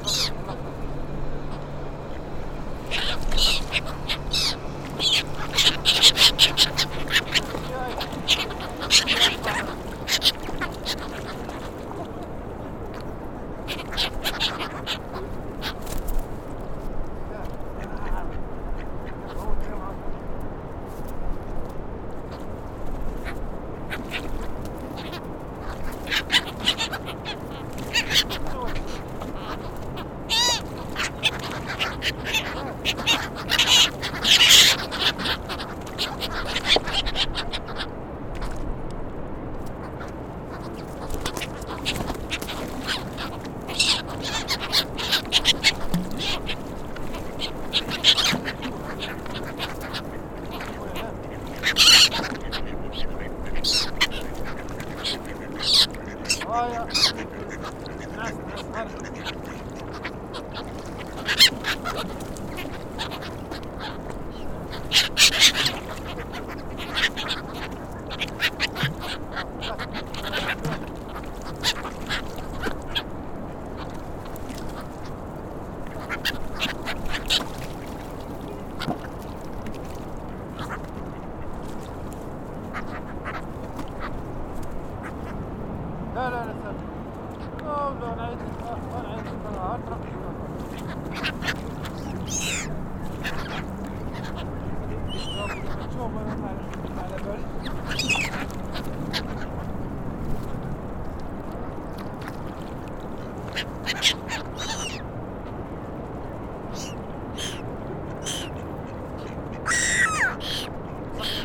Quai Lucien Lombard, Toulouse, France - at the water’s edge
gull, duck, sound of water
at the water’s edge, walker speaks, noise from the city and traffic in the background
Capatation ZOOMH6